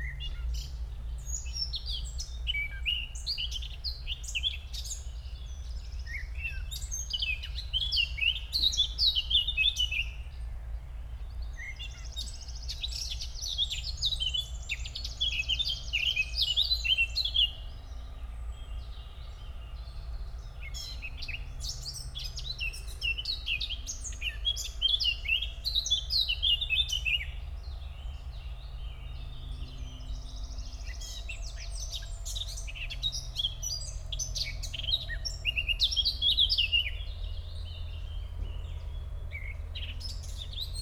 edge of park Pszczelnik, Siemianowice Śląskie - Eurasian black cap
at the edge of park Pszczelnik, Eurasian black cap (Mönchsgrasmücke in german) in tree above me, quite unimpressed of my presence
(Sony PCM D50, DPA4060)